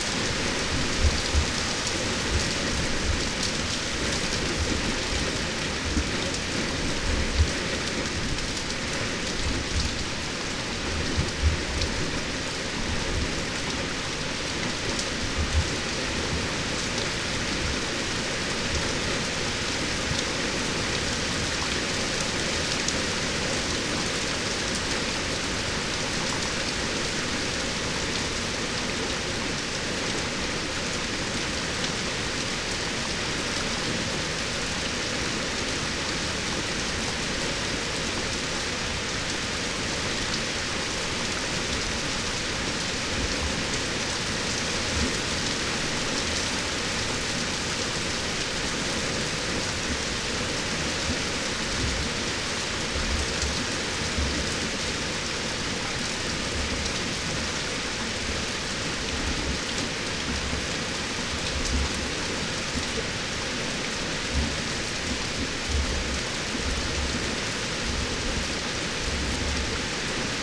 Berlin Bürknerstr., backyard window - Hinterhof / backyard ambience
00:34 Berlin Bürknerstr., backyard window
(remote microphone: AOM5024HDR | RasPi Zero /w IQAudio Zero | 4G modem
Berlin, Germany